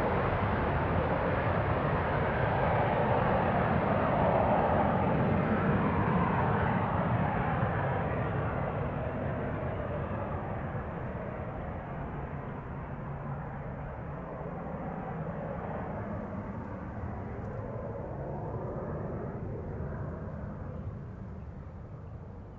Taitung Forest Park, Taiwan - Fighter flight traveling through
Fighter flight traveling through, The distant sound of traffic, Zoom H6 M/S